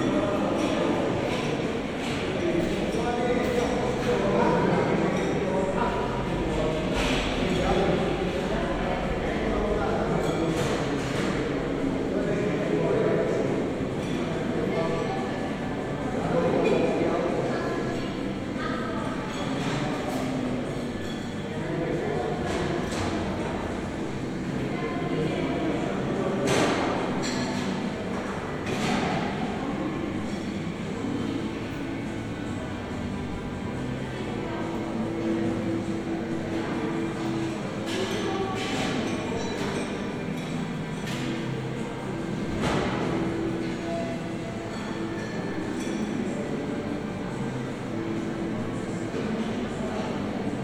Restaurace na Hlavním nádraží v Plzni. Slovany, Česká republika - Samoobsluha
Samoobsluha na Plzeňském nádraží odpoledne.